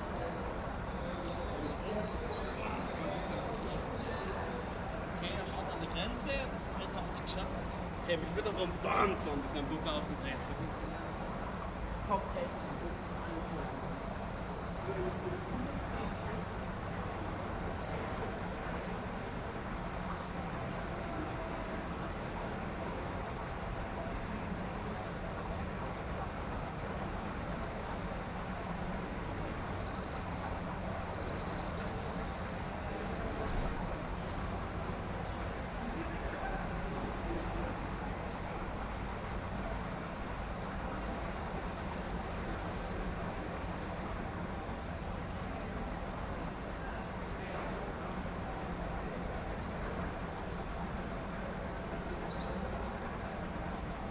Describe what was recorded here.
Voices, water, a sneeze, a bell - the inner city of Aarau